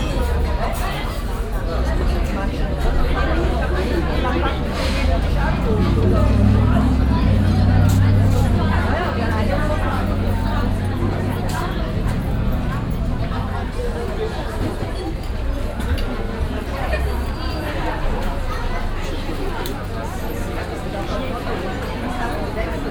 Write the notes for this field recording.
lebhafter betrieb am eiscafe, nachmittags, spezielle akustische verdichtung da unter grossem vordach gelegen, soundmap nrw: social ambiences/ listen to the people - in & outdoor nearfield recordings